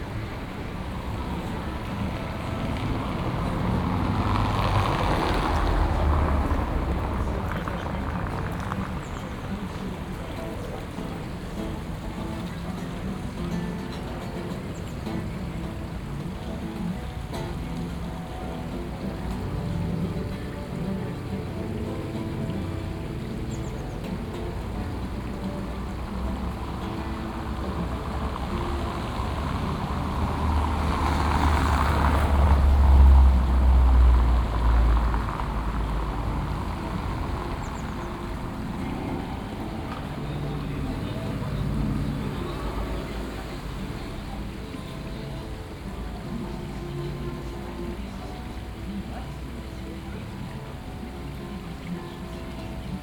Leuschnerdamm - street sounds, radio
sounds from the nearby garden, people listening sports radio in front of house
Berlin, Germany, 22 August